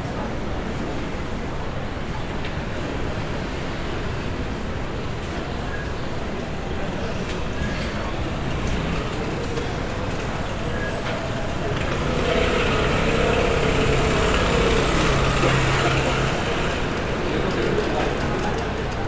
Usandizaga Kalea, Donostia, Gipuzkoa, Espagne - Usandizaga Kalea
cosmopolitan atmosphere, street cafes and tasteful restaurants
Captation ZOOM H6